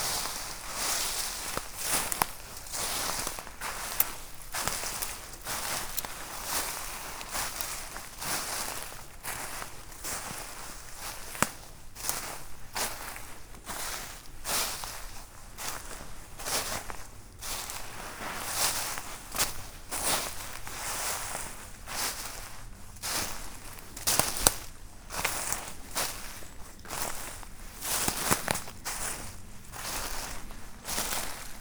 Chaumont-Gistoux, Belgique - Dead leaves
Walking in a thick mat of dead leaves in a quiet forest.
Chaumont-Gistoux, Belgium